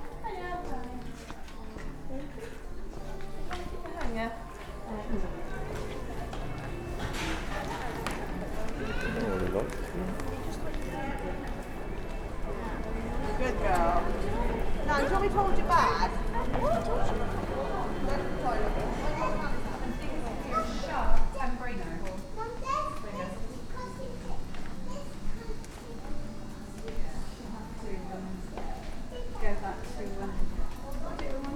A real time wander through the cavernous interior of a modern covered shopping centre. This place is never really busy and individual sounds are easily recognised and the ambient sounds change rapidly. Recoded with a MixPre 3 and 2 x Bayer Lavaliers